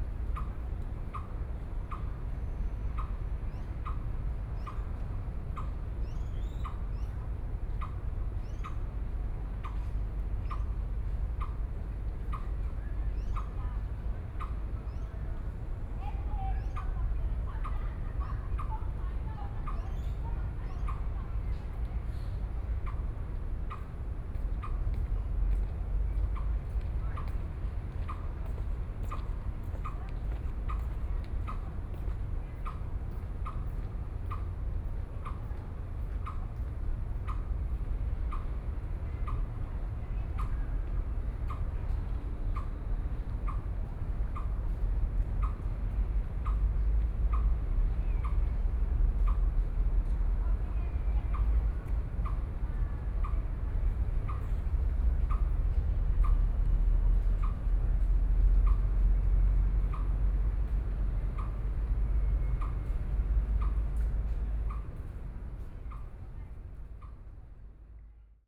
Hot and humid afternoon, Sony PCM D50 + Soundman OKM II